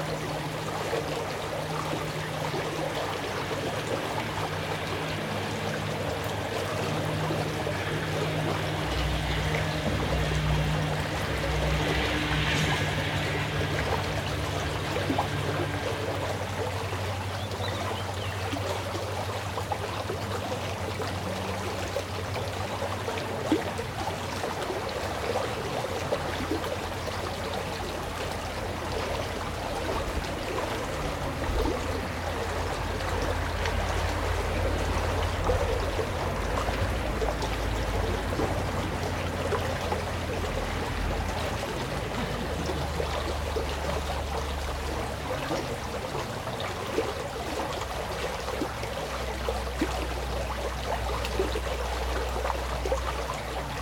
Lonlay-l'Abbaye, France - Summer evening at Abbaye de Lonlay
Listening by the edge of a stream that passes the abbey, campers chat and laugh on the other side by a small derelict barn and occasionally cross over a small pedestrian bridge to use the toilets and return to their tents erected in the abbey gardens. As is the custom in this part of Normandy the bells give a two tone, descending ring for every quarter-of-an-hour that passes. (Fostex FR2-LE and Rode NT4a Stereo Microphone).